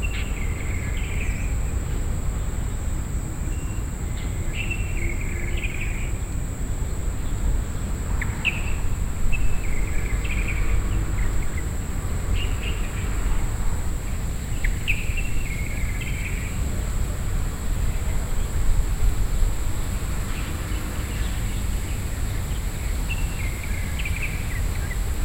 北投行天宮, Beitou District, Taipei - birds
Taipei City, Taiwan, 8 November 2012